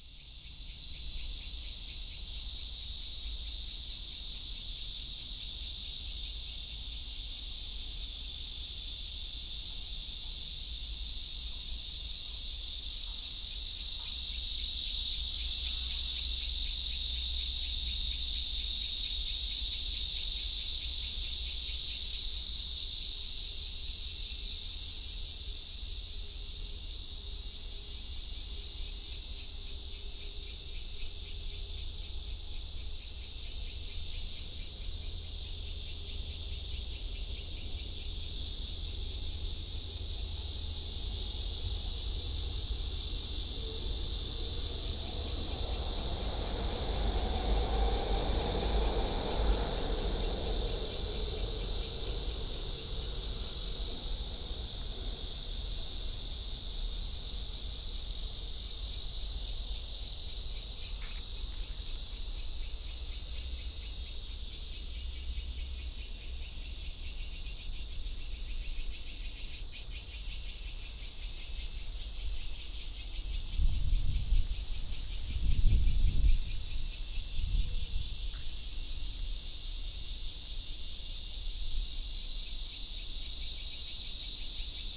Dayuan Dist., Taoyuan City - Near the airport
Near the airport, traffic sound, Cicada cry, MRT train passes, The plane took off